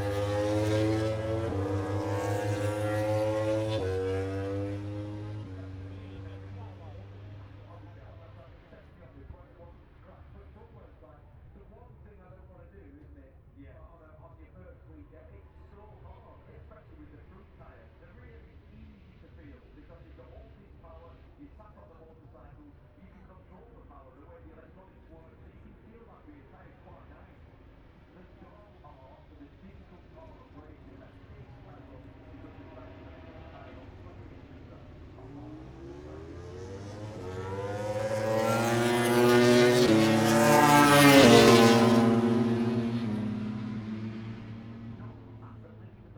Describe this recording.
moto grand prix free practice three ... copse corner ... olympus ls 14 integral mics ...